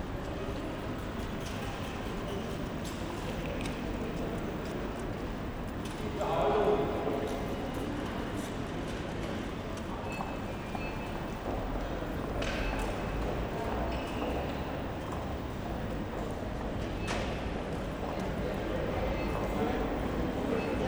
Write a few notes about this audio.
ideling at Graz main station, had to wait 4h for my train to Slovenia. ambience at the station hall. (tech: SD702, Audio Technica BP4025)